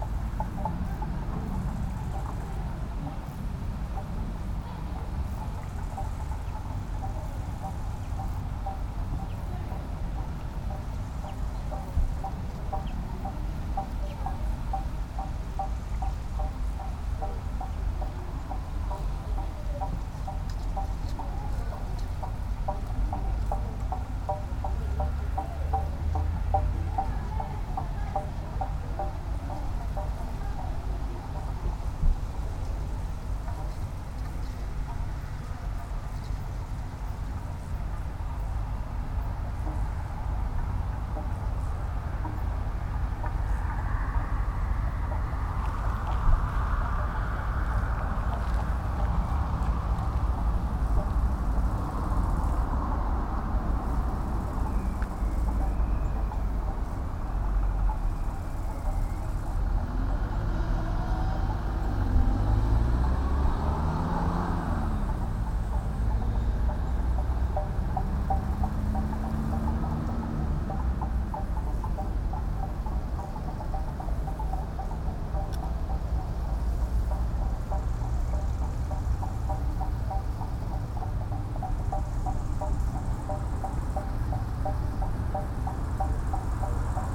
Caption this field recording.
wind rattle, yellow-orange butterflies, cars, tractor, crickets, human voices